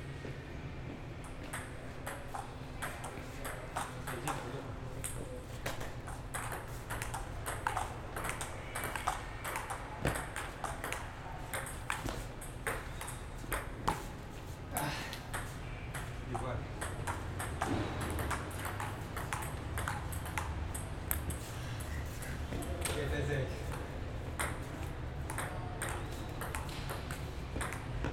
Universidad de Medellín, Medellín, Antioquia, Colombia - Ambiente zona de ping pong Coliseo UdeM
Descripción: Coliseo de la Universidad de Medellín (Zona de Ping Pong).
Sonido tónico: pelotas de Ping Pong, gente hablando y saltando, vehículos transitando.
Señal sonora: silbato, pitos de vehículos.
Técnica: Grabación con Zoom H6 y micrófono XY
Grupo: Luis Miguel Cartagena, María Alejandra Flórez Espinosa, María Alejandra Giraldo Pareja, Santiago Madera Villegas y Mariantonia Mejía Restrepo.